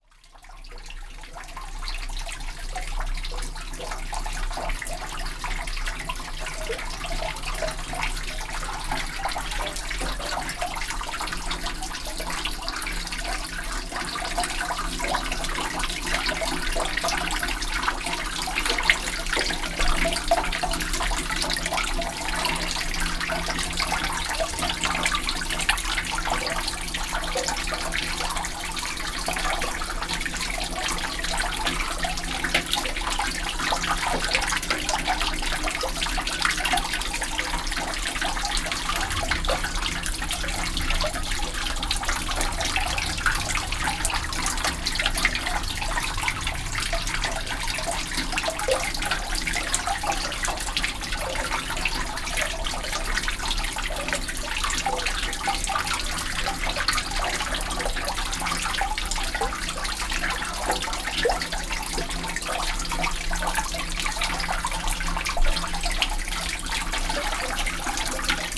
Florac, Rue du Four, fountain under the church / fontaine sous l'église
Florac, France, 12 July 2011